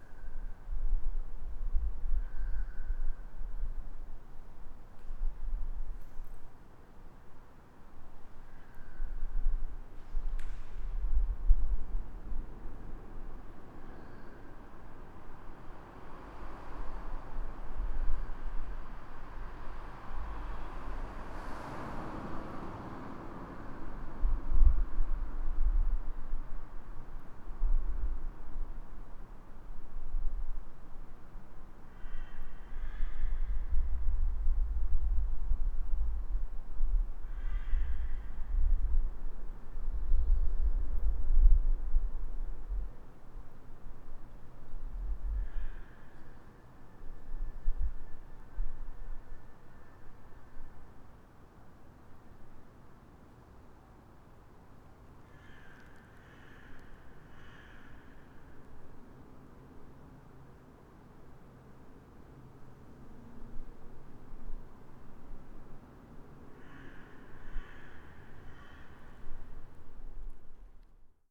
Punto Franco Nord, Trieste, Italy - sunnday afternoon quietness
quiet ambience inside of voluminous hall with decayed rooftop
8 September 2013